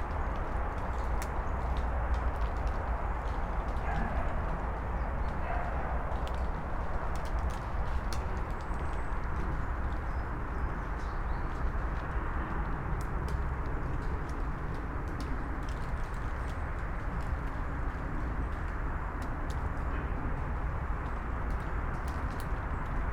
Piramida, Maribor, Slovenia - frozen drops
frozen drops on their way through tree crowns when leaves were bright orange, accompanied with highway traffic 100 meters below